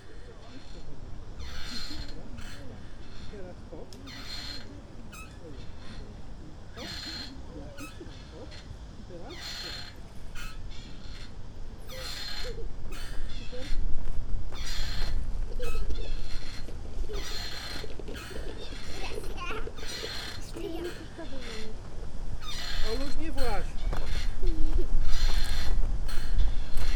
Ogrodowa, Lusowo, Polska - swing at the lake
woman relaxing with her child on a big swing, planes taking off from a nearby, airport, a few people playing in the water, bikers passing by (rolnad r-07)